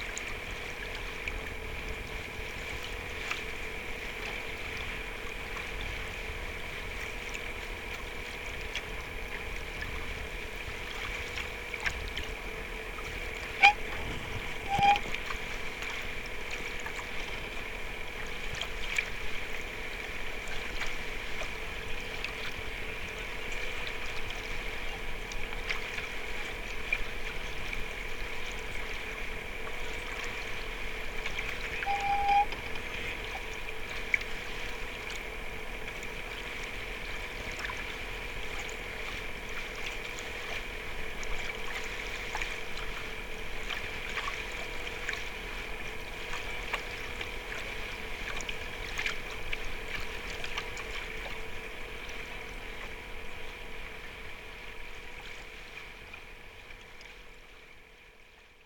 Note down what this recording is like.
contact mic at the hull of the boat, the city, the country & me: july 26, 2012